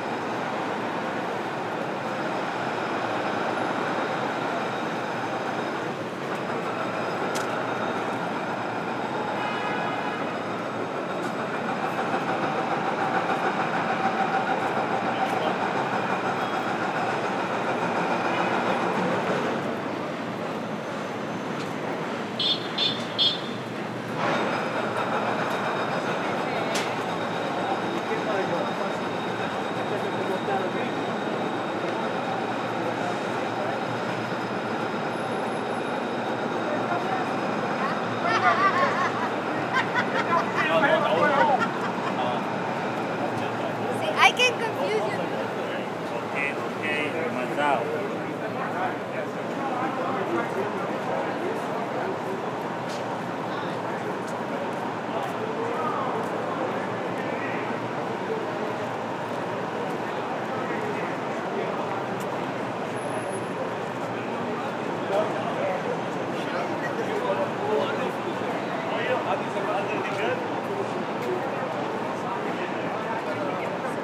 Soundwalk through Midtown to Times Square.